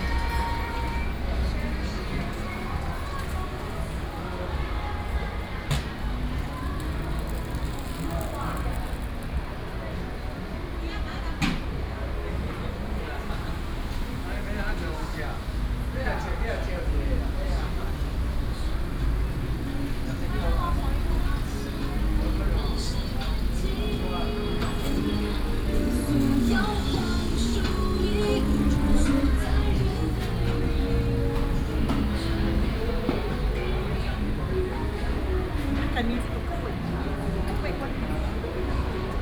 龜山黃昏市場, Taoyuan City - Evening market
Evening market, Traffic sound, ambulance
Taoyuan City, Taiwan, 7 August 2017, ~17:00